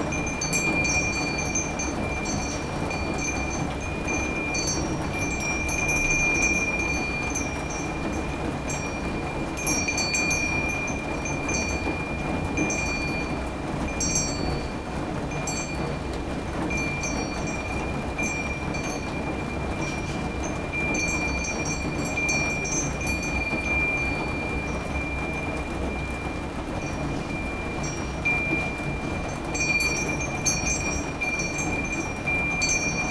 Utena, Lithuania, balcony, rain
rain in my balcony (if somebody would like to drop a bomb - it's right here) and happy chimes
10 August 2012